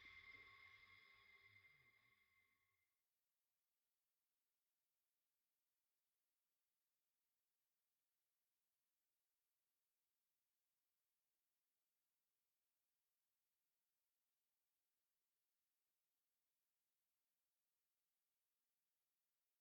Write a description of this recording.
Dual contact microphone recording of a street light pole. Trolleybus electricity lines are also hanging attached to this pole. Resonating hum and traffic noises are heard, as well as clattering noise from a trolleybus passing by.